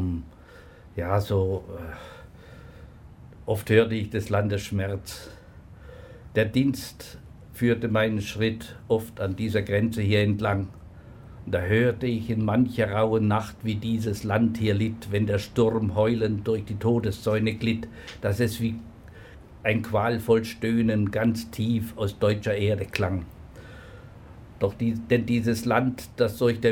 billmuthausen - im freien
Produktion: Deutschlandradio Kultur/Norddeutscher Rundfunk 2009
Bad Rodach, Germany